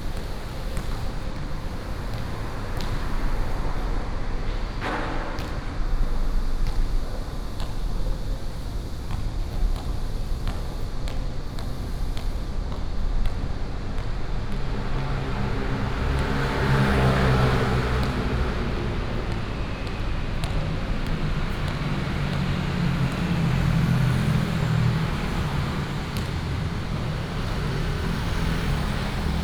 Ln., Jieshou Rd., Taoyuan Dist. - Under the fast road
Under the fast road, Cicadas, Basketball court, skateboard, Dog sounds, Traffic sound